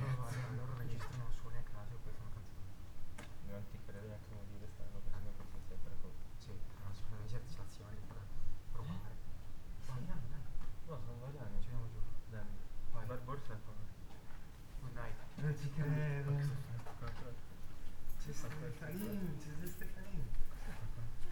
via San Vito, Trieste, Italy - small window with riped safety net
whispering voices ... wondering why there is someone standing still, close to small window, with hand, silently projected inside ... discussing dilemma on what kind of sounds are almost inaudible